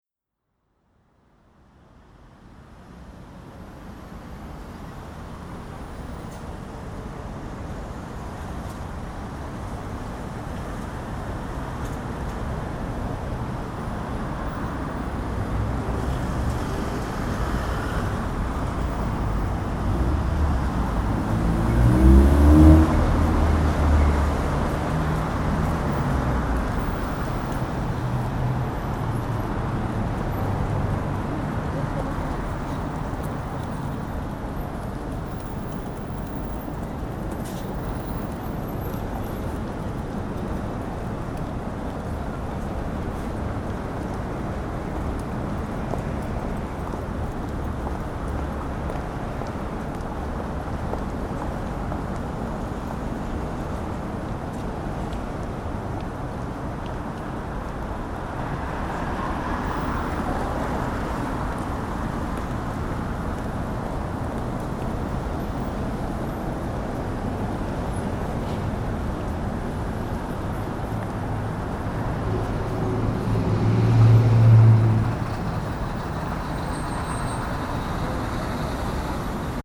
Nihonbashi - Thunder Upon the Bridge
“Just as the city prospers, one hears the feet of people and horses beating like thunder upon the bridge.” – Miura Joshin
We tend to assume that urban soundscapes have gotten louder over time, which makes the area of Nihonbashi an interesting case study that demonstrates how this may not always be the case: The bridge of Nihonbashi has historically been described as unbearably noisy, but today is actually one of the quieter urban areas that I visited along the Tōkaidō, despite its continued role as the official literal centre of Tōkyō. Modern shoes make a much softer and duller sound than the wooden sandals that were popular in Miura Joshin’s time, and horses are obviously far less common. What’s more, the bridge itself is no longer wooden but is instead made of a sturdier and much less resonant stone material. However, a thunderous sound does pervade to this day: that of the constant drone of traffic which I would find hardly ever ceased during the 500+km journey that lay before me.
Tōkyō-to, Japan, 10 March 2015